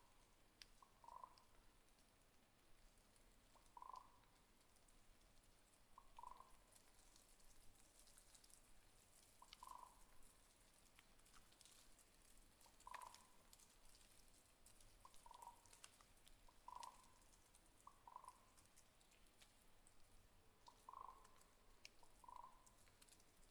Lithuania, at the lake Paliminas